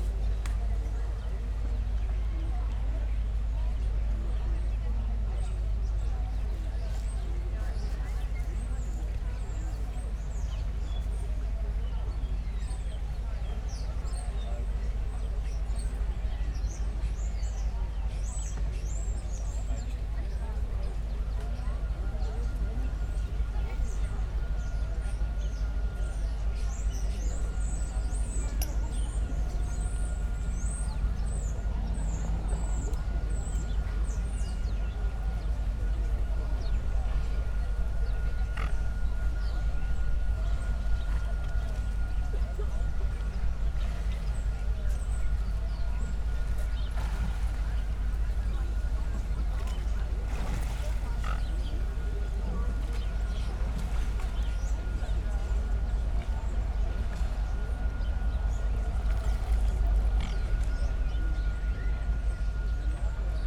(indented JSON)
{
  "title": "berlin, paul linke ufer - ambience at the Landwehrkanal",
  "date": "2014-10-19 13:00:00",
  "description": "a warm Sunday early afternoon at the Landwehkanal, many people are out on the streets and in parks. at the other side of the canal is a flee market, the drone of a generator, a higher pitched sound of unclear origin, the murmur of many voices, bird's activity in the foreground.\n(SD702, DPA4060)",
  "latitude": "52.49",
  "longitude": "13.43",
  "altitude": "35",
  "timezone": "Europe/Berlin"
}